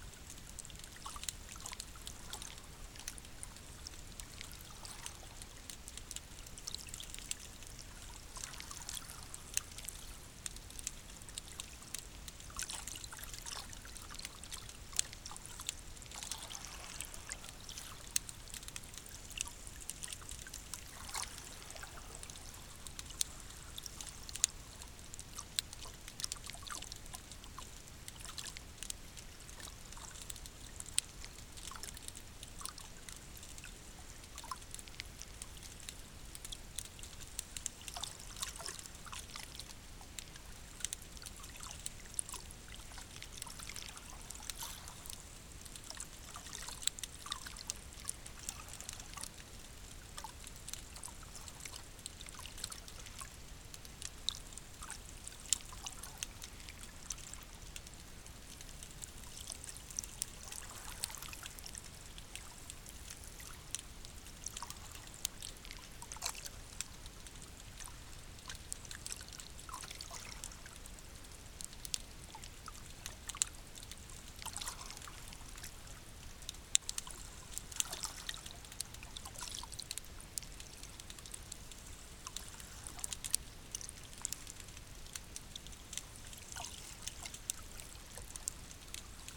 hydrophone recording on Heybeliada island near Istanbul